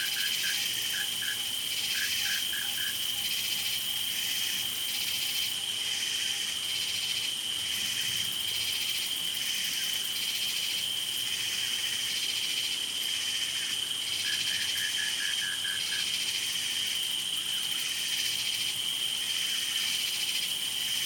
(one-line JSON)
{"title": "Fayette County, TX, USA - Sunday Dusk Arc: Ledbetter Ranch", "date": "2015-06-23 08:00:00", "description": "Recorded at sundown from the balcony of a ranch home in Ledbetter, TX. Recorded with a Marantz PMD661 and a stereo pair of DPA 4060's.", "latitude": "30.13", "longitude": "-96.82", "altitude": "136", "timezone": "America/Chicago"}